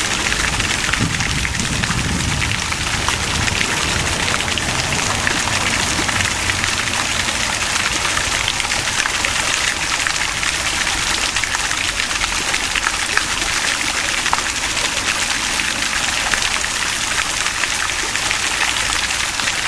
Piazza Vittoria, Parabiago, fontana Piazza Vittoria
La fontana di P.zza della Vittoria col suo scrosciare di acqua sotto il faggio monumentale.